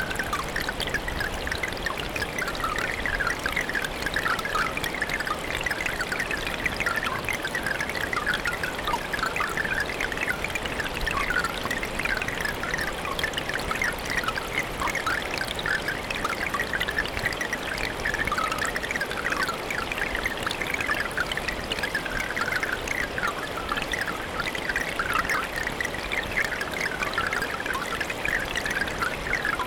Top of Spillway, Valley Park, Missouri, USA - Top of Spillway
Hydrophone and MS stereo recording from top of spillway. Falls captured off to left. Hydrophone in a shallow rocky channel in front of the stereo mic.